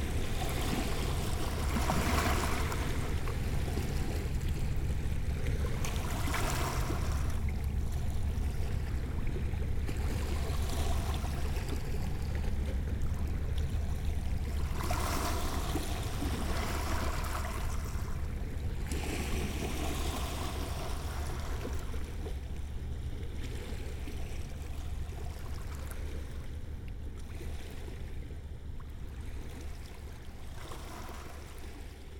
Grand-Couronne, France - Boat on the Seine river
By night, the Viking Kadlin boat is passing by on the Seine river.